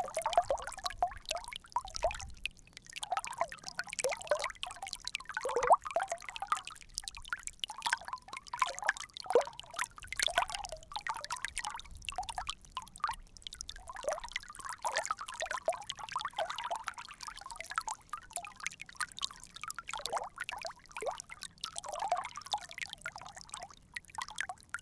Le Pont-de-Montvert, France - Tarn spring
The Lozere Mounts. This is the Tarn spring. Water is just born to earth.